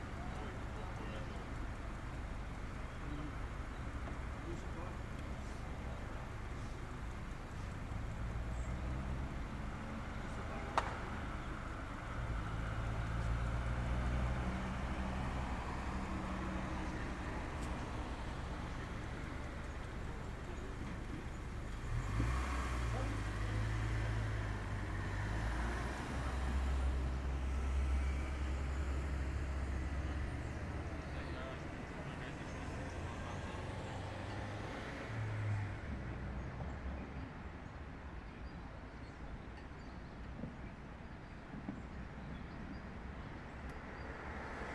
Avenue du Temple, Lausanne, Suisse - ambiance extérieure
rumeur urbaine depuis fenêtre captée par couple Schoeps